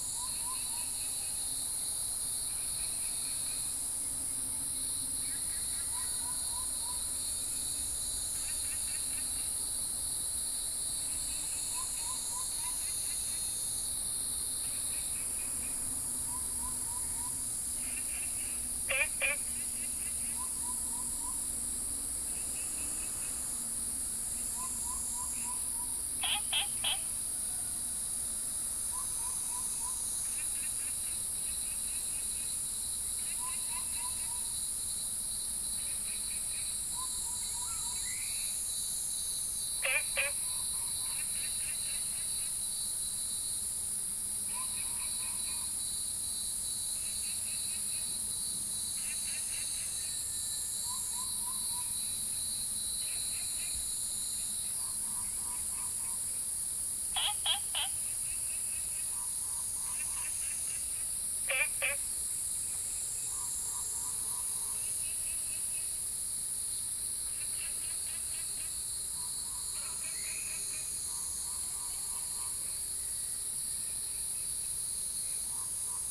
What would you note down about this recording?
In the morning, Bird calls, Cicadas cry, Frogs chirping